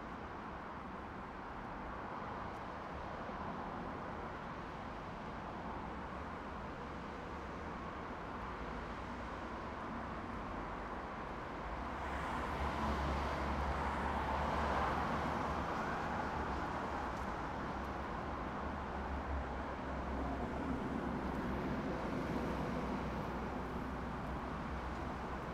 St Petersburg, Russia, Ligovsky Prospekt - Ligovsky Prospekt